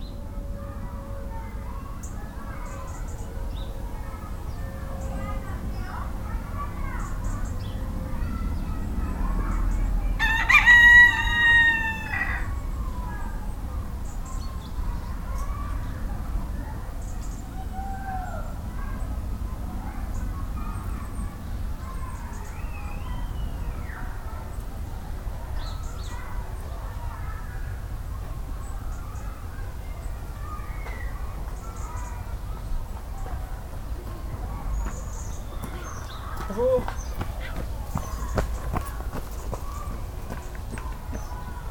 {
  "title": "Court-St.-Étienne, Belgique - Geese",
  "date": "2015-09-11 11:55:00",
  "description": "In a rural place, a rooster is shouting, two runners saying hello and two geese coming to see what's happening.",
  "latitude": "50.62",
  "longitude": "4.56",
  "altitude": "102",
  "timezone": "Europe/Brussels"
}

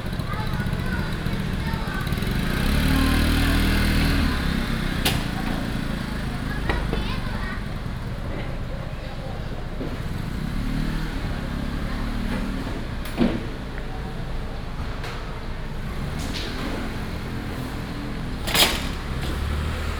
{
  "title": "遊園路二段203巷1弄, Dadu Dist., Taichung City - the vendors are packing up",
  "date": "2017-09-24 12:42:00",
  "description": "the vendors are packing up, in the Traditional Markets, traffic sound, Binaural recordings, Sony PCM D100+ Soundman OKM II",
  "latitude": "24.17",
  "longitude": "120.58",
  "altitude": "256",
  "timezone": "Asia/Taipei"
}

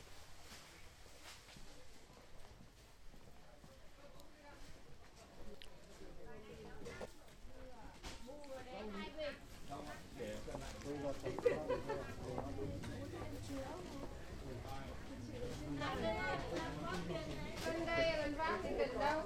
{
  "title": "Little Hanoi, Libus",
  "date": "2008-04-10 12:42:00",
  "description": "Recording from the Vietnamese Market Halls SAPA in Libuš. The Little Hanoi is hidden in the outskirts, inside the industrial complex of the former nightmarish Prague Meat factory. They call the Market SAPA, inspired paradoxically by a beautiful town somewhere in the Vietnamese mountain range near the Chinese border.",
  "latitude": "50.00",
  "longitude": "14.47",
  "altitude": "295",
  "timezone": "Europe/Prague"
}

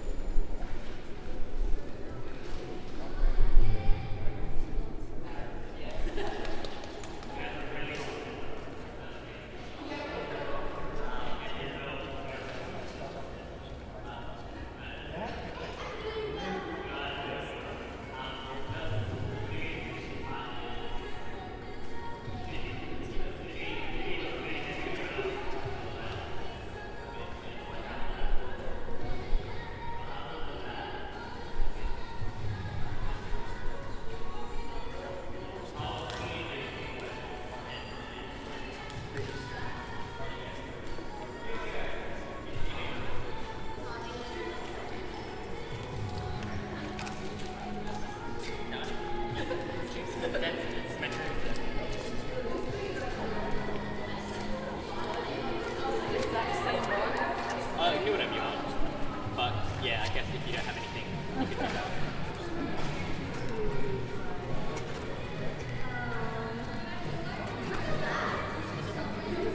{
  "title": "Kilkenny, South Australia - Video and Photo Shoot in a Derelict Factory",
  "date": "2017-10-28 11:20:00",
  "description": "Recorded during a photo and music video shoot in a derelict factory. Large enclosed space with lots of gravel and dust and pigeon poop on the ground (and dead pigeons). You can hear the pigeons cooing and flying around in the rafters if you listen carefully.",
  "latitude": "-34.89",
  "longitude": "138.55",
  "altitude": "12",
  "timezone": "Australia/Adelaide"
}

